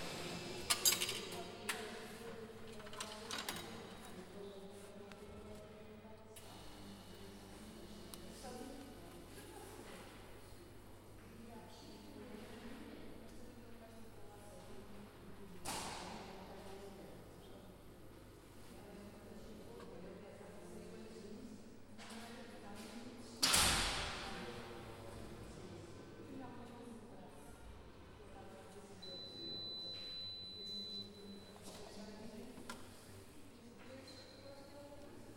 Nossa Senhora do Pópulo, Portugal - ESAD.CR - Entrada e Máquina de Café
Recorded with TASCAM DR-40